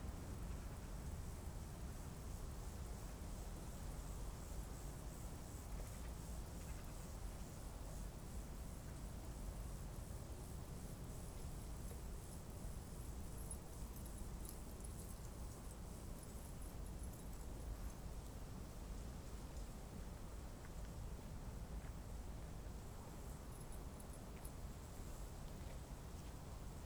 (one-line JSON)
{"title": "berlin wall of sound - north of bornholmer str. banhoff, pankow on the former death strip.", "latitude": "52.56", "longitude": "13.40", "altitude": "42", "timezone": "Europe/Berlin"}